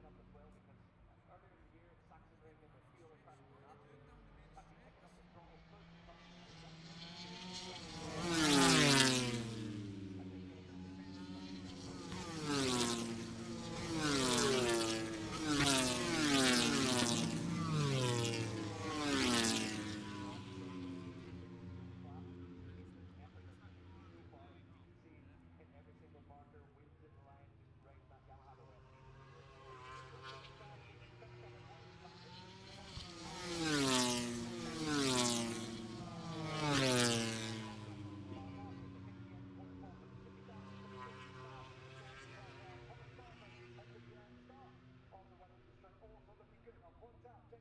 Silverstone Circuit, Towcester, UK - british motorcycle grand prix 2021 ... moto grand prix ...
moto grand prix free practice two ... maggotts ... dpa 4060s to Zoom H5 ...
27 August 2021, ~14:00